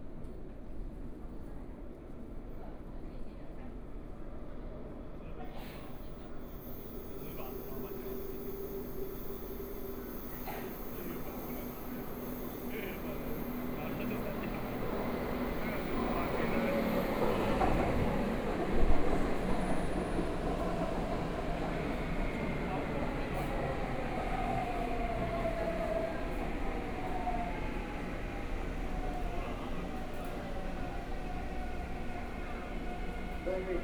walking in the Station, Binaural recordings, Zoom H4n+ Soundman OKM II